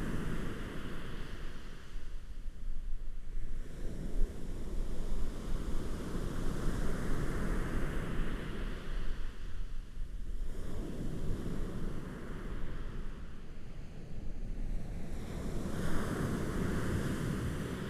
Hualien City, Taiwan - Waves
Sound of the waves, Zoom H4n+Rode NT4
Hualien County, Hualian City, 花蓮北濱外環道, 5 November 2013, 14:07